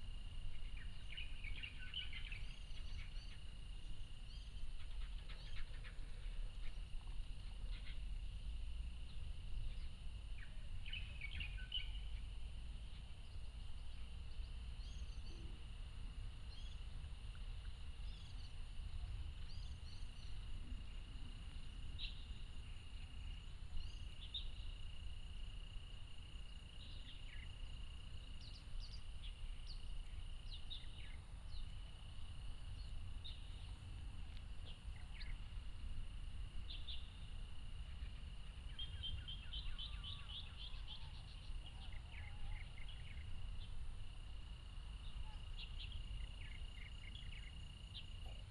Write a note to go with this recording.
Under the tree, Bird calls, Insect sounds